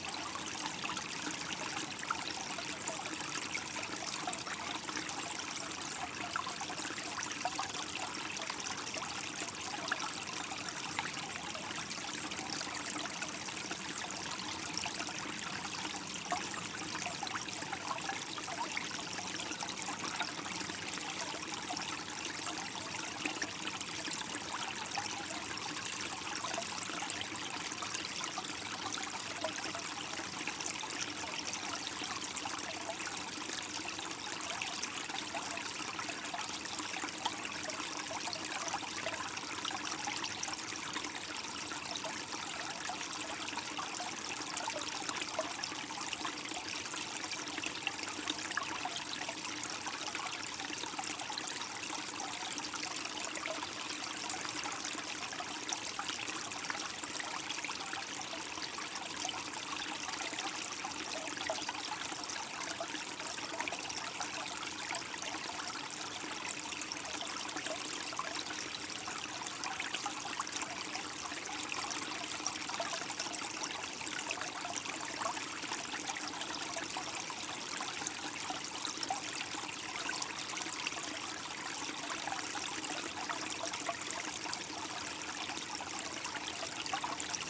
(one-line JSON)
{"title": "Rixensart, Belgique - Small stream", "date": "2019-01-20 13:00:00", "description": "Into the Rixensart forest, sound of a small stream during the noiseless winter.", "latitude": "50.72", "longitude": "4.55", "altitude": "90", "timezone": "Europe/Brussels"}